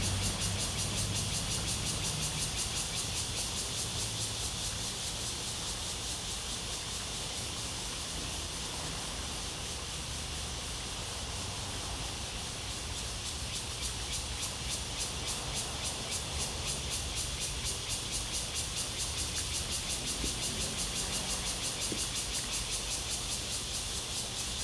淡水海關碼頭, New Taipei City - Facing the river
At the quayside, Cicadas cry, The sound of the river, Traffic Sound
Zoom H2n MS+XY
New Taipei City, Taiwan, 18 July 2015